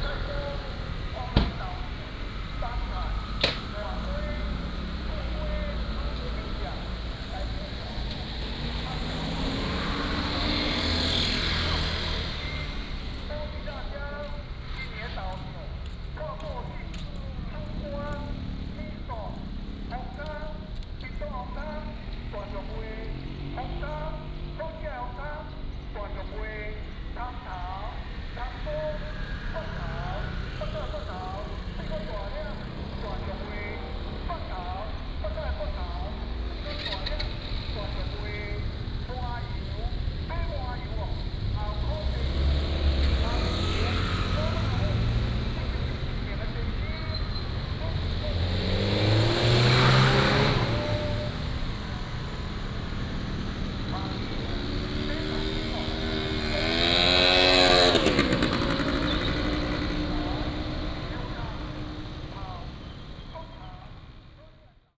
Xinying Rd., Magong City - The entrance to the market
The entrance to the market, the fish market